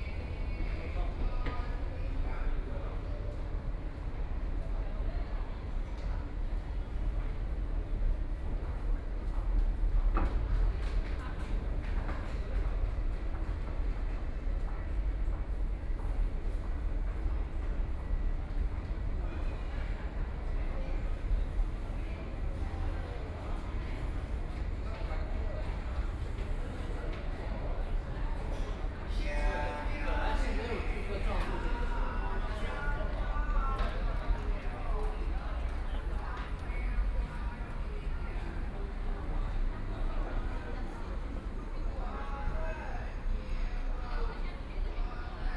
Jing'an Temple Station, Shanghai - Walking in the station
Walking in the station, Exit of the station, A beggar is singing, Binaural recording, Zoom H6+ Soundman OKM II
Jing'an, Shanghai, China